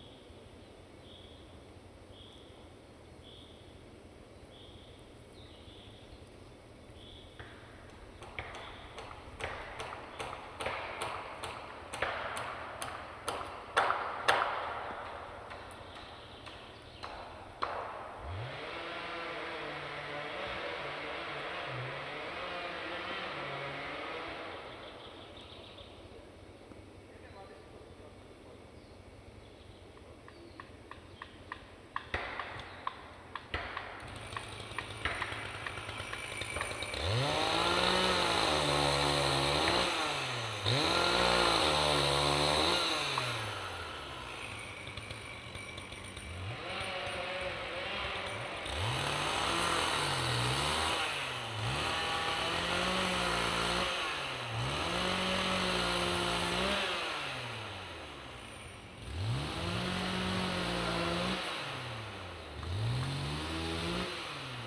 Narewka, Poland - Białowieza Forest is killed (binaural)
In the national holiday (Constitution Day on May 3), with the consent of the Polish government is destroyed national treasure of nature, beautiful and magical place, Bialowieza Forest.